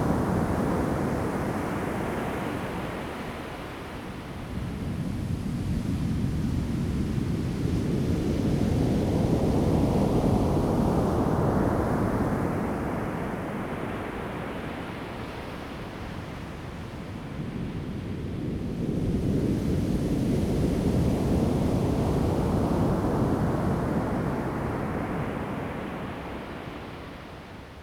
太麻里海岸, Taimali Township, Taiwan - Sound of the waves
At the beach, Sound of the waves, birds sound
Zoom H2n MS+XY